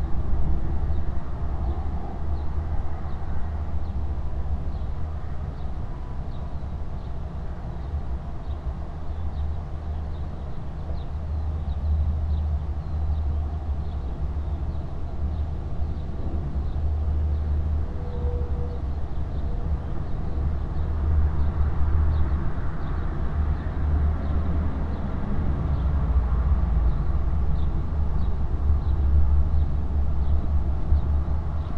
hoscheid, sound sculpture, lauschinsel - hoscheid, sound sculpture lauschinsel
a second recording of the same place, here with a new headphone application that is attached to the wooden tubes of the installation.
Projekt - Klangraum Our - topographic field recordings, sound sculptures and social ambiences